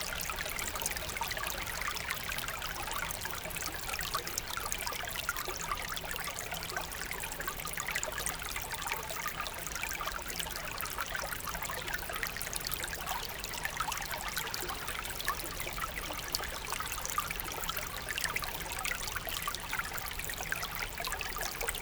Recording of a very small stream inside the woods. This rivulet has no name, as it's so small ! But there's an impressive basin, probably because of the agricultural runoff.
Chaumont-Gistoux, Belgium, 15 August 2016, 17:30